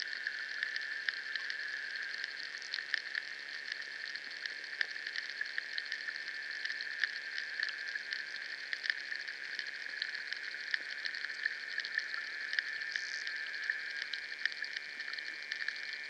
Duburys lake, Lithuania, underwater
hydrophones in the Duburys lake